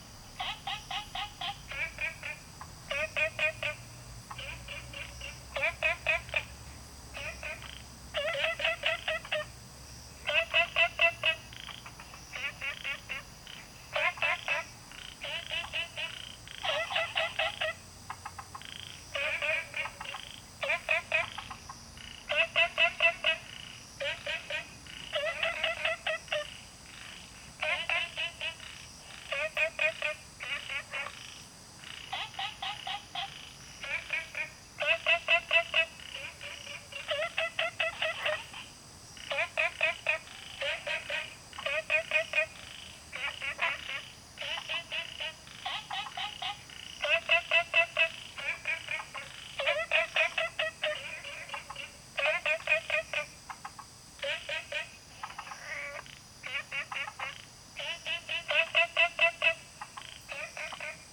青蛙阿婆家, Taomi Ln., Puli Township - Different kinds of frogs chirping
Frog chirping, Many species of frogs, Insect sounds
Zoom H2n MS+XY
Nantou County, Puli Township, 桃米巷11-3號, 11 August, 21:13